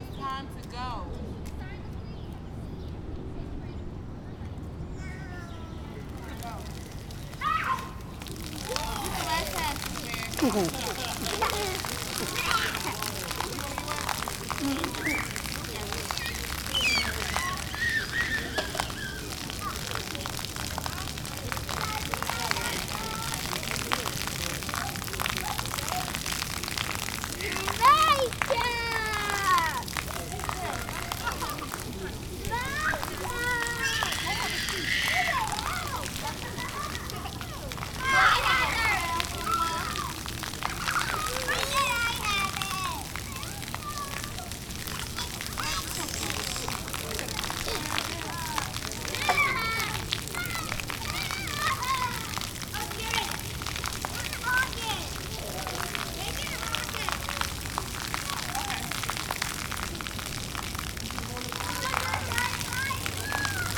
Pedestrian Mall Fountain, Iowa City
water, fountain, children, laughter